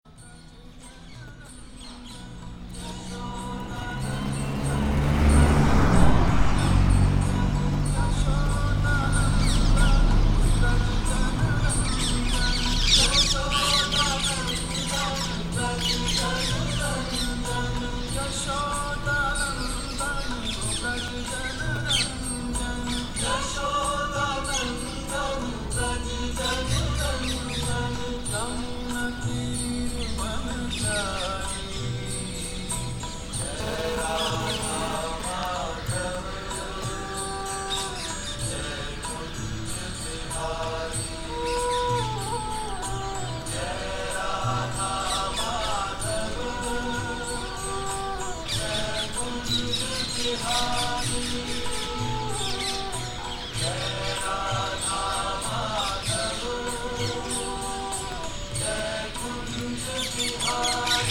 Gwalior Fort, Gwalior, Madhya Pradesh, Inde - Devotional to Krishna
This is the end of the afternoon, a man is lying on a bed next to a temple listening to a devotional song dedicated to Krishna. Behind the temple stands a tank covered by water lilies.
Madhya Pradesh, India